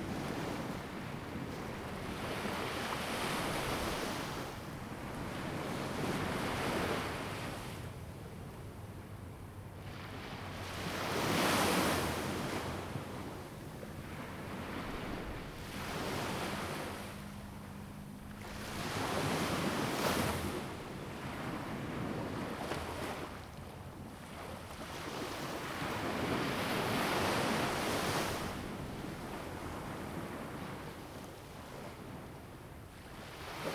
三芝區後厝里, New Taipei City - Beach
Sound of the waves, Beach
Zoom H2n MS+XY
New Taipei City, Taiwan, 21 November, 15:10